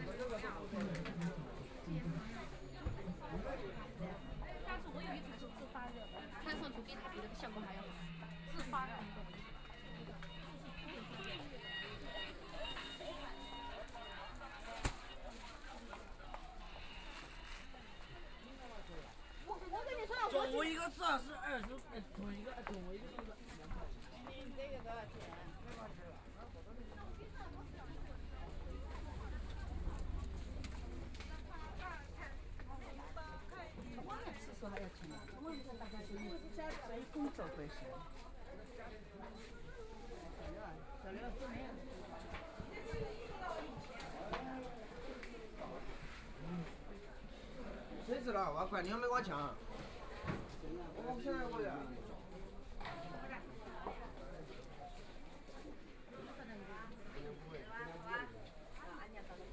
Fu You Lu, Huangpu District - Market Building
walking in the Market Building, Binaural recording, Zoom H6+ Soundman OKM II